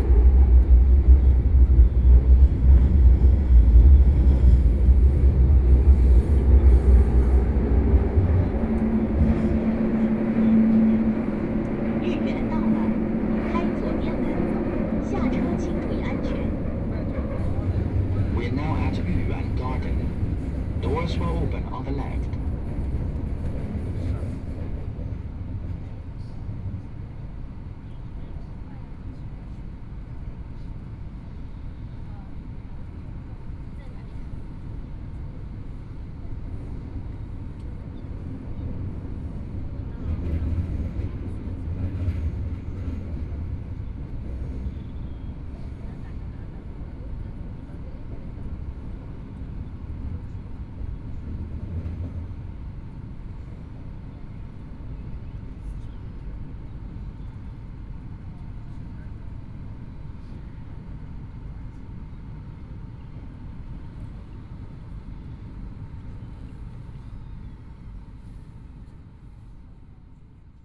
from Xintiandi Station to Yuyuan Garden Station, Binaural recording, Zoom H6+ Soundman OKM II ( SoundMap20131126- 34)